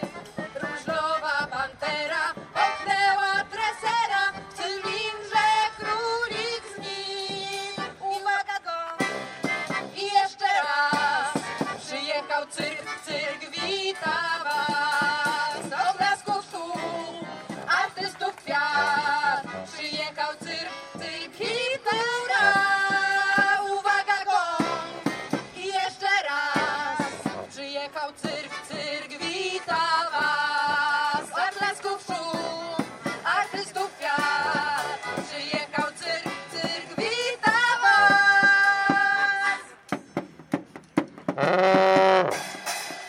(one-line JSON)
{"title": "rynek Sienny, Białystok, Poland - Wschód Kultury - Inny Wymiar 2018", "date": "2018-08-30 16:27:00", "latitude": "53.13", "longitude": "23.15", "altitude": "147", "timezone": "GMT+1"}